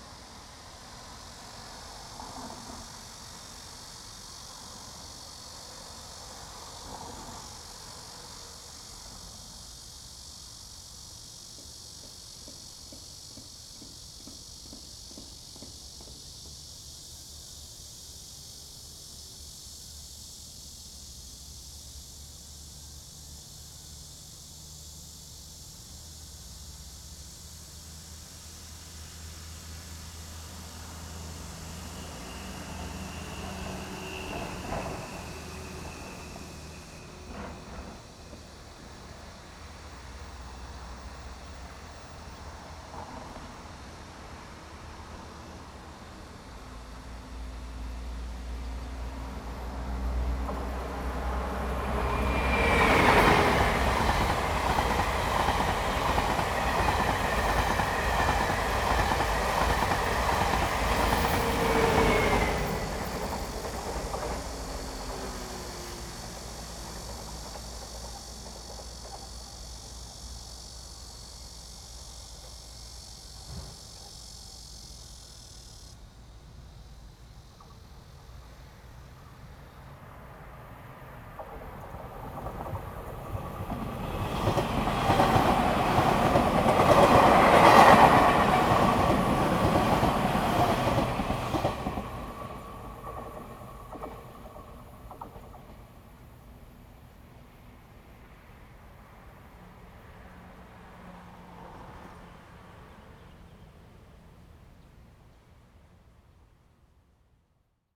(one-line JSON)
{"title": "民富路三段, Yangmei Dist. - in the railroad track side", "date": "2017-08-12 16:00:00", "description": "in the railroad track side, traffic sound, birds sound, Cicada cry, The train runs through\nZoom H2n MS+XY", "latitude": "24.93", "longitude": "121.10", "altitude": "122", "timezone": "Asia/Taipei"}